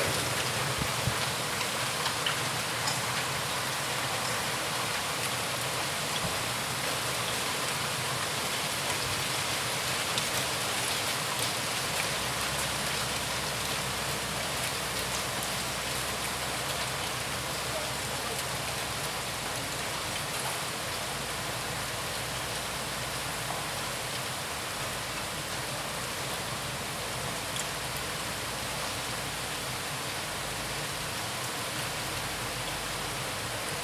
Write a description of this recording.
Regen und Hagel.Rain and Hail.